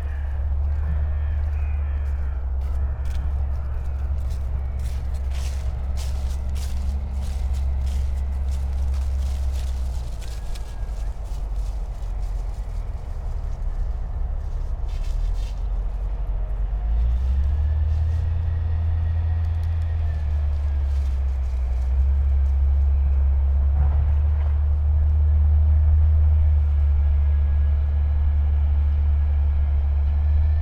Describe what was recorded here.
around one of my favourite places, excavation work has started, an artificial pond for water management will be built. drone and hum of machines, and the motorway is quite present too today because of south west wind. (Sony PCM D50, Primo EM172)